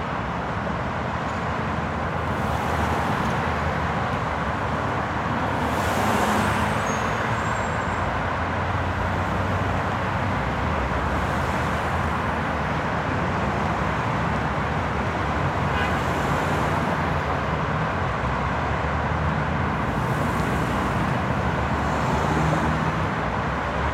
E 42nd St, New York, NY, USA - FDR Drive
Sound of traffic from Franklin D. Roosevelt East River Drive commonly known as the FDR Drive.
Also in the background sounds from the basketball park.
United States, 1 April 2022